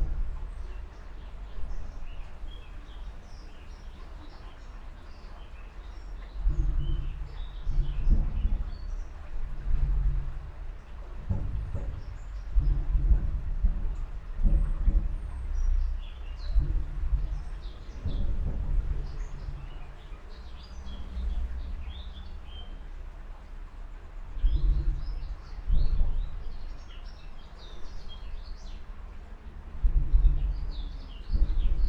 Rue Léon Metz, Esch-sur-Alzette, Luxemburg - cars hitting manhole percussion
cars at the nearby junction hit a manhole cover, which can be heard in a tube, 50m away under this bridge
(Sony PCM D50, Primo EM272)